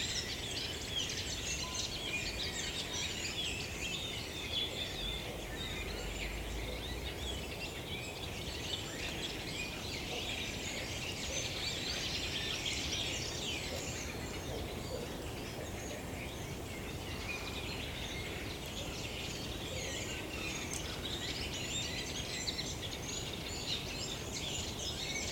Lithuania, the hum of highway
On saturday I went to to natural geomorphologic reserve in hope to escape men made noise...failed. the hum of highway, even in quarantine times, is prevailing...